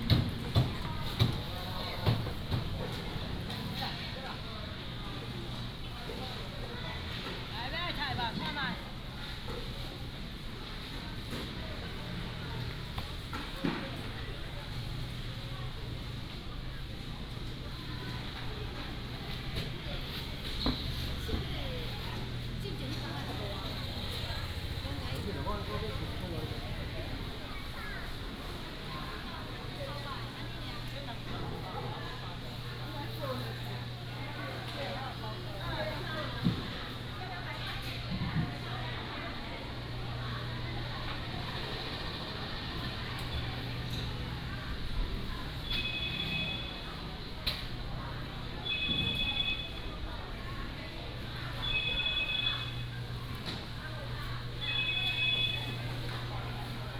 斗南鎮立第二市場, Dounan Township, Yunlin County - Walking in the traditional market
Walking in the traditional market, Indoor and outdoor markets